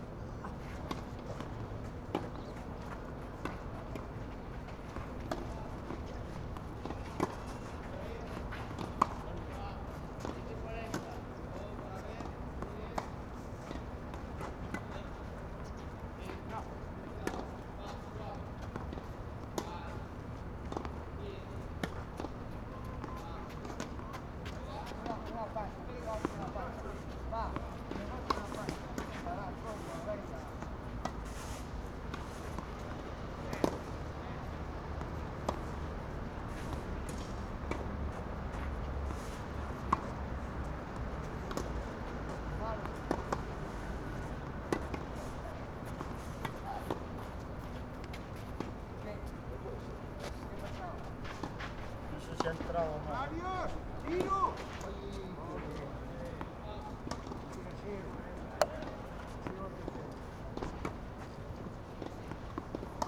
Club Esportiu
Jubilated men playing tennis in a working day morning